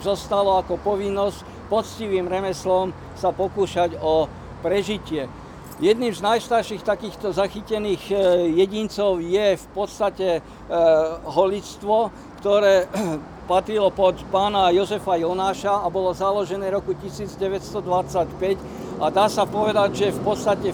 Unedited recording of a talk about local neighbourhood.